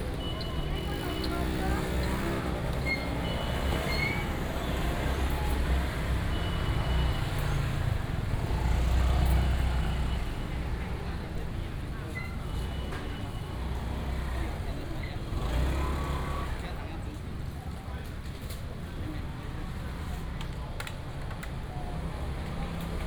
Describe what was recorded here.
Walking in the traditional market